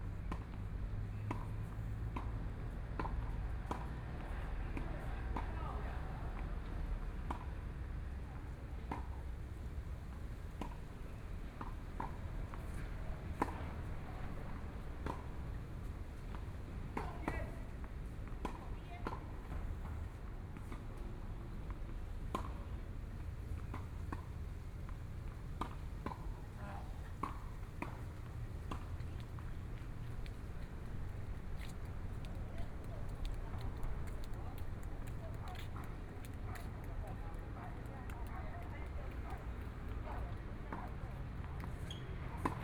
內湖區湖濱里, Taipei City - Tennis
Sitting next to tennis courts, in the Park, Distant school students are practicing traditional musical instruments, Aircraft flying through, Traffic Sound, Construction noise
Binaural recordings, Sony PCM D100 + Soundman OKM II